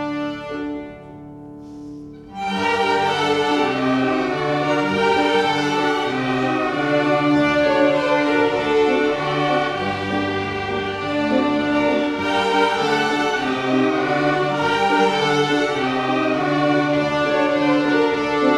pupils from age 6 to 16 playing
Berlin, Germany, 7 April 2011